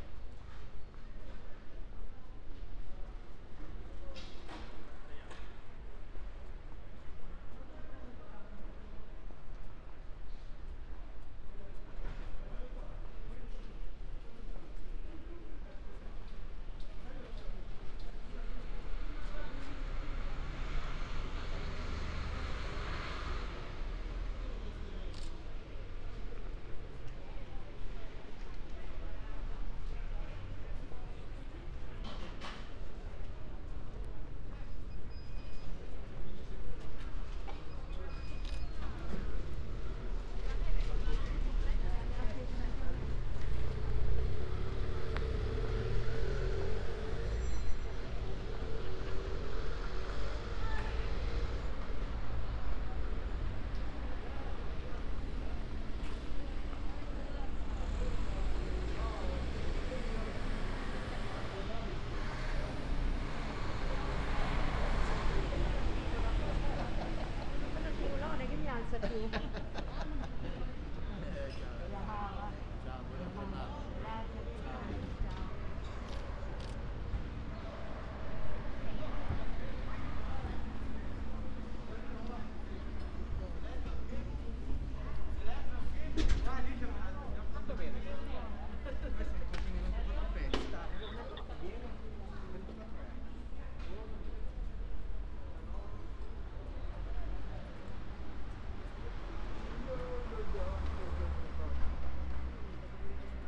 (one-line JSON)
{"title": "Ascolto il tuo cuore, città. I listen to your heart, city. Several chapters **SCROLL DOWN FOR ALL RECORDINGS** - “Outdoor market on Monday in the square at the time of covid19”: Soundwalk", "date": "2020-11-30 12:11:00", "description": "“Outdoor market on Monday in the square at the time of covid19”: Soundwalk\nChapter CXLV of Ascolto il tuo cuore, città. I listen to your heart, city.\nMonday, November 30th 2020. Walking in the outdoor market at Piazza Madama Cristina, district of San Salvario, more then two weeks of new restrictive disposition due to the epidemic of COVID19.\nStart at 00:11 p.m. end at 00:32 p.m. duration of recording 30:49”\nThe entire path is associated with a synchronized GPS track recorded in the (kml, gpx, kmz) files downloadable here:", "latitude": "45.06", "longitude": "7.68", "altitude": "245", "timezone": "Europe/Rome"}